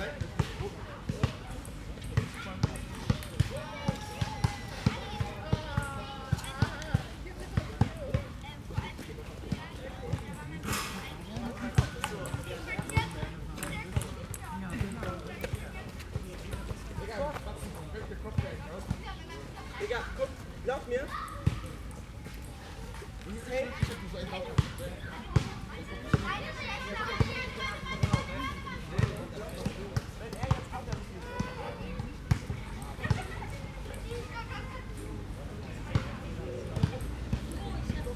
Sebastianstraße, Berlin, Germany - Ballgames and kids in Luisenstädtischer Kirchpark
These playground always seem very popular. The weather was fine and there are a lot of people around. The autumn colours were great too with leaves thick upon the ground. There are some big trees here including one shimmering aspen with an intriguing hollow trunk.
1 November 2020, 15:18